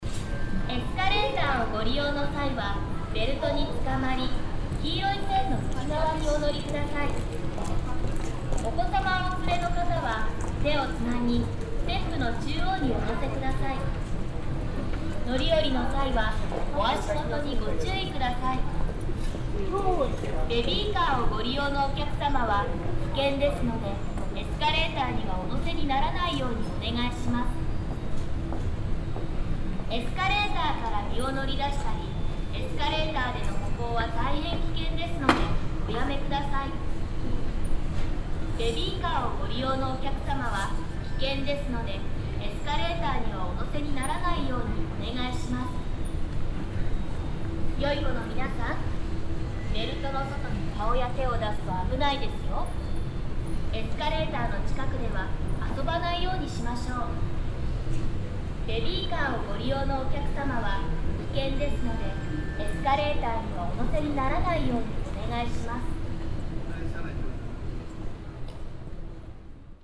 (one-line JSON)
{"title": "yokohama, queens square, moving staircase, announcement", "date": "2011-07-01 12:00:00", "description": "Entering the building from the subway level. A repeated automatic announcement at the moving staircases.\ninternational city scapes - topographic field recordings and social ambiences", "latitude": "35.46", "longitude": "139.63", "altitude": "26", "timezone": "Asia/Tokyo"}